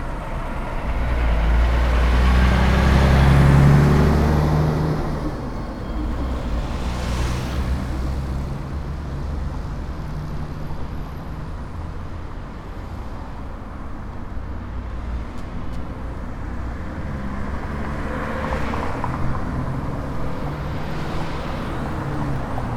Traffic on Avenida Las Torres after two years of recording during COVID-19 in phase 2 in León, Guanajuato. Mexico. Outside the Suzuki car dealership.
I made this recording on june 9th, 2022, at 5:48 p.m.
I used a Tascam DR-05X with its built-in microphones and a Tascam WS-11 windshield.
Original Recording:
Type: Stereo
Esta grabación la hice el 9 de abril 2022 a las 17:48 horas.